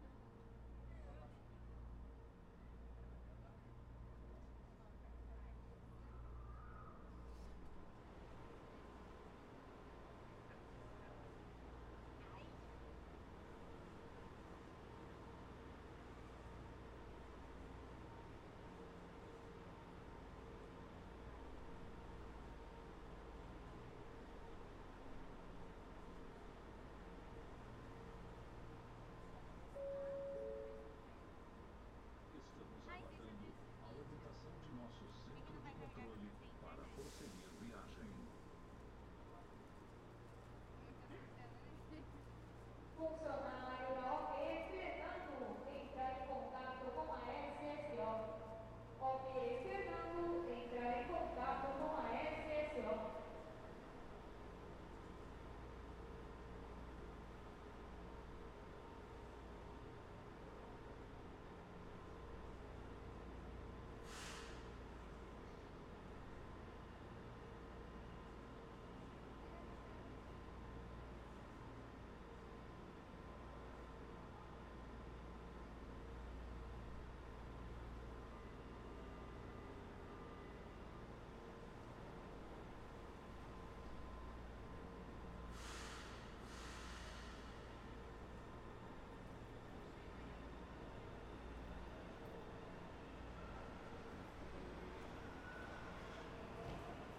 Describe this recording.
Gravamos dentro de um vagão da estação da sé, próximo ao horário de pico.